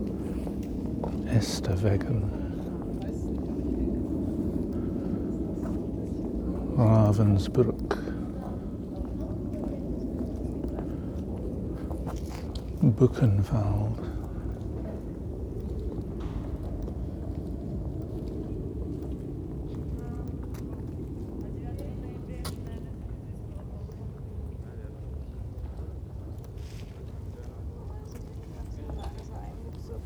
Holocast Memorial, Jewish Cemetery, Weißensee
Close to the entrance of the cemetary, the memorial is a circle of stones each bearing the name a concentration camps.